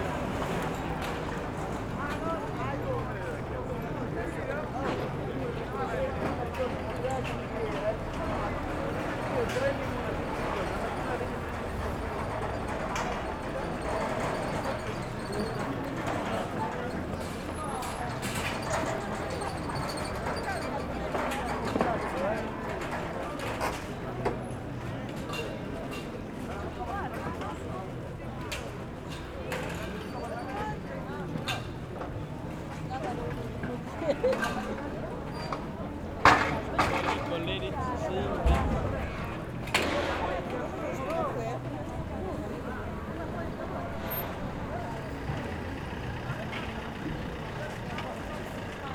Piazza Campo de Fiori, Roma RM, Italy - Closing market at Campo deFiori
Market closing, pedestrians
Fin de marché, passants